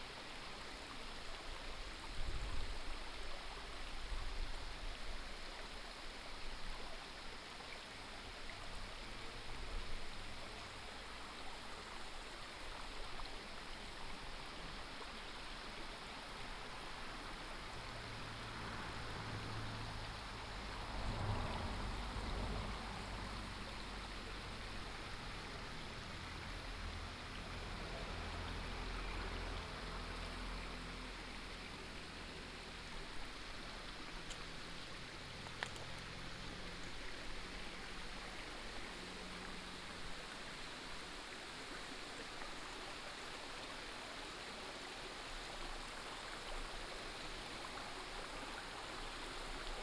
沙河溪, Gongguan Township - stream
stream, traffic sound, Binaural recordings, Sony PCM D100+ Soundman OKM II